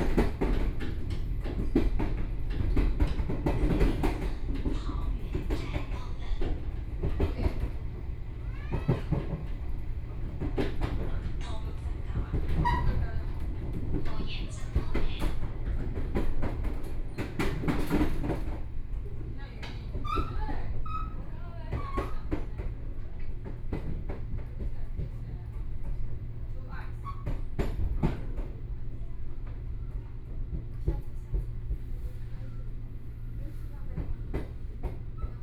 Western Line, Taiwan - Tze-Chiang Train
Zhongli Station to Taoyuan Station, Zoom H4n+ Soundman OKM II